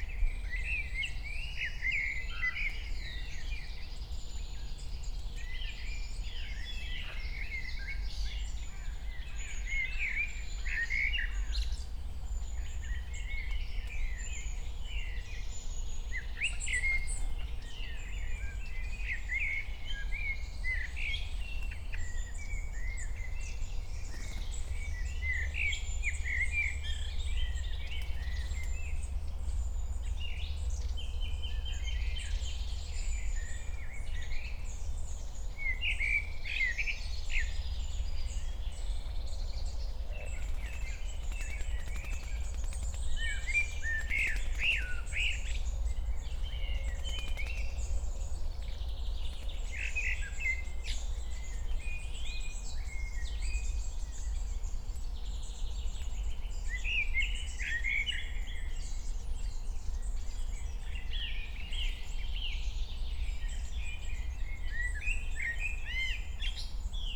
Königsheide, Berlin - forest ambience at the pond
10:00 voices, crows, fluttering wings, other birds
Deutschland